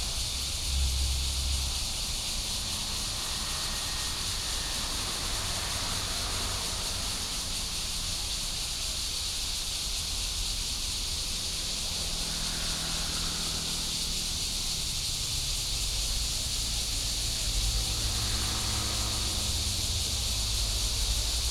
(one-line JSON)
{"title": "Ln., Sec. Jiulong, Zhongxing Rd., Longtan Dist. - Cicadas and Traffic sound", "date": "2017-07-25 08:32:00", "description": "Cicadas and Traffic sound, Birds sound", "latitude": "24.88", "longitude": "121.24", "altitude": "218", "timezone": "Asia/Taipei"}